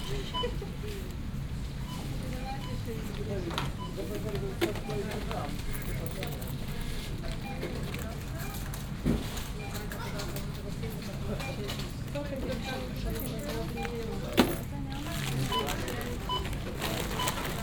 Lidl store, Szymanowskiego, Poznan - shopping
(binaural rec, please use headphones) shopping at lidl store. entire visit at the store from the entrance to the cash registers (roland r-07 + luhd pm-01 bins)